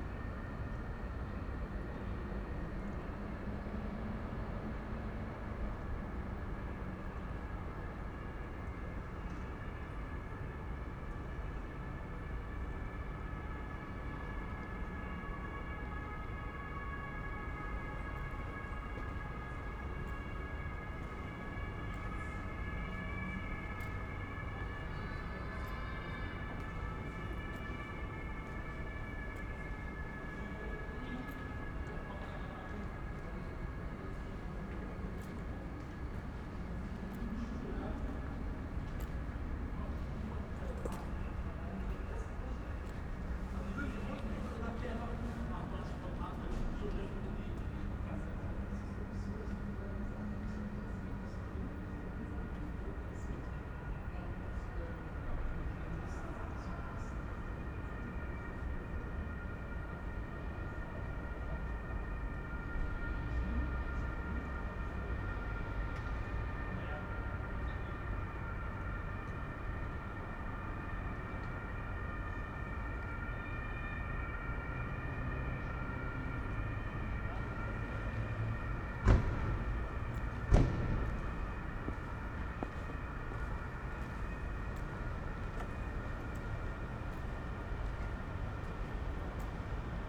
Keibelstraße, Berlin, Deutschland - downtown residential area
short walk, evening in late summer, still warm, it's dark, a short walk in Keibelstr., downtown residential area, almost no people on the street, emptyness in concrete, distant traffic hum, ventilations
(Sony PCM D50, Primo EM172)
9 September 2021, 21:30